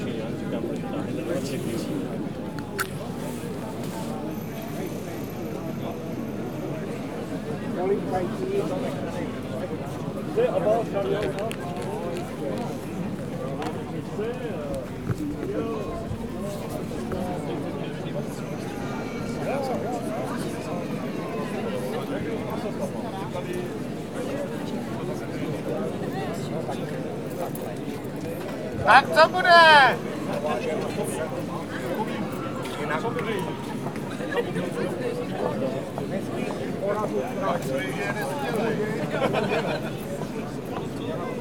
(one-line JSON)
{"title": "Česká, Brno-Brno-střed, Česko - Walk Through a Crowd Of Protesters, Freedom Square (Náměstí Svobody)", "date": "2015-10-28 14:00:00", "description": "Recorded on Zoom H4n, 28.10. 2015.", "latitude": "49.20", "longitude": "16.61", "altitude": "226", "timezone": "Europe/Prague"}